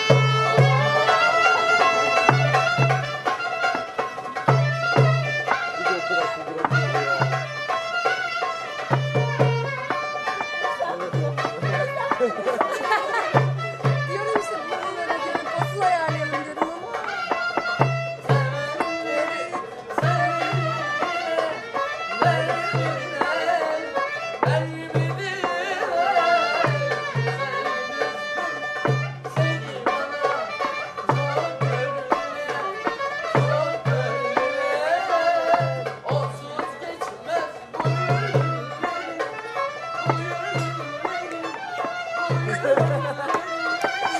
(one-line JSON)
{"title": "Taksim, singing the songs", "date": "2010-10-18 21:23:00", "description": "Winter is coming in in Istanbul and Taksims famousroof top terrace are not as crowded anymore. Thus we get the chance to actually listen to the songs of the musicians still wandering from bar to bar. Whoever is inebriate and excitable enough stands up and accompanies the singing. Maybe also those, that don understand a word, the foreigner, yabanci.", "latitude": "41.03", "longitude": "28.98", "altitude": "75", "timezone": "Europe/Istanbul"}